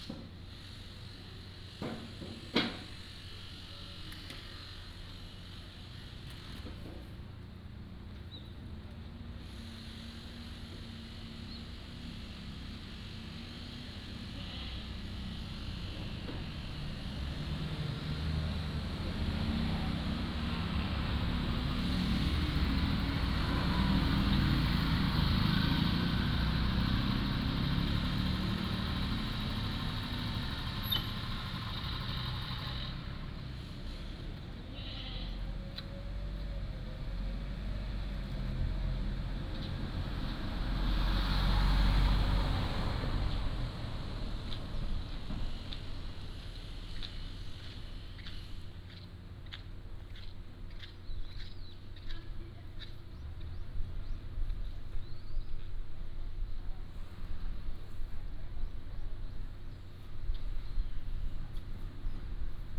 椰油村, Koto island - Small tribes
Small tribes, Traffic Sound, Yang calls